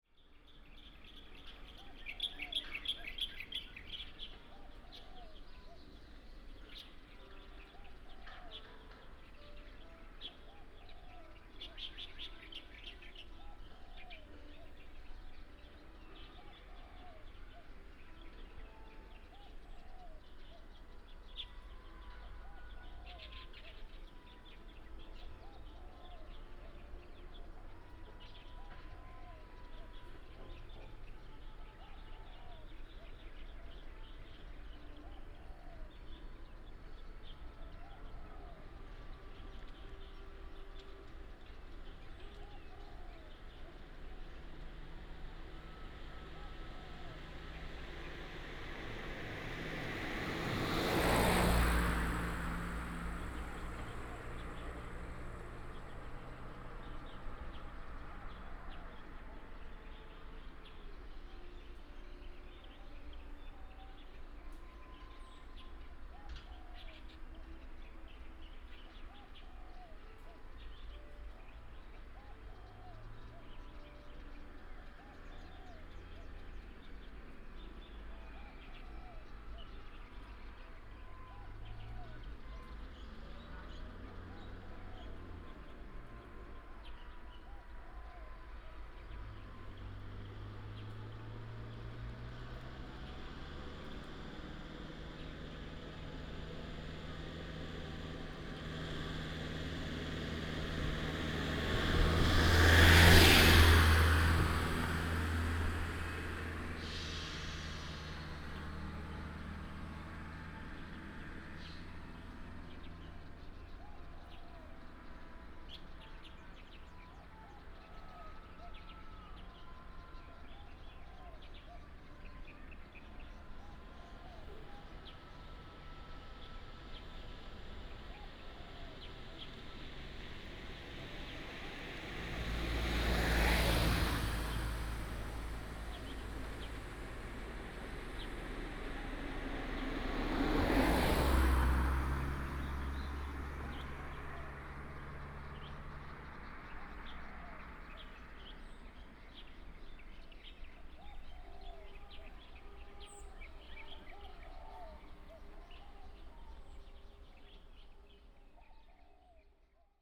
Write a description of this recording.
Bird call, Traffic sound, Music from distant schools, Binaural recordings, Sony PCM D100+ Soundman OKM II